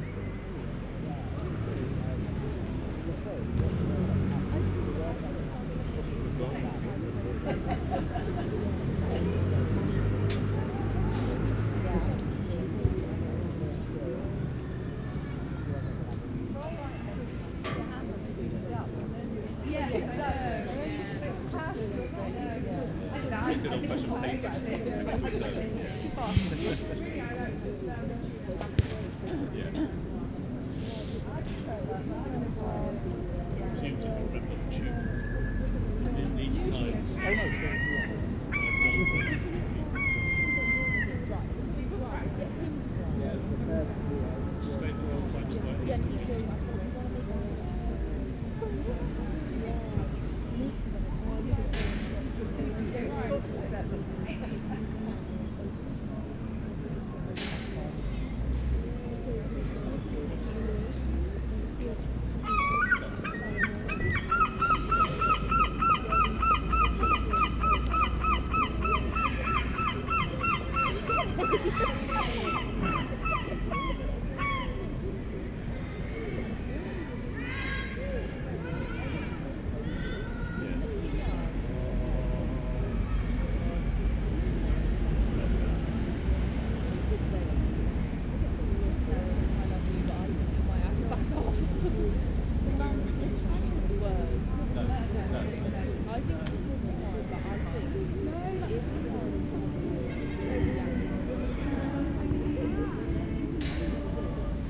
St Andrews park, Bishopston, Bristol - St Andrews park ambient sound + choir practice
Some ambient sound from a sunny day at the beginning of autumn in the park. Lots of nice variation of sounds, people talking, walking, kids playing, birds, and a choir practicing.
Recorded using the internal XY + Omni mics on the Roland R26
England, United Kingdom, 1 September 2020